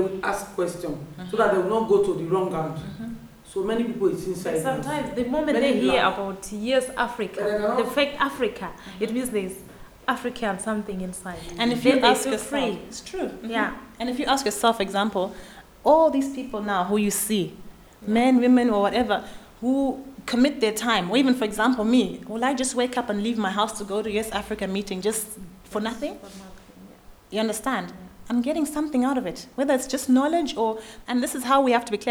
VHS, Hamm, Germany - I want to do something....
Khanyie picks up... raises question... how can we come together and support each other...
5 July 2014, ~13:00